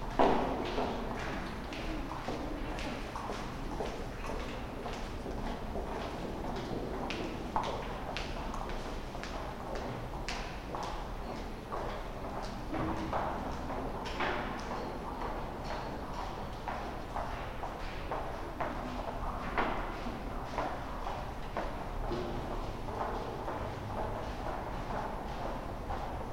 minsk, october square, perechod II - minsk, october square, perechod
Minsk, Belarus, 20 August 2009, 20:40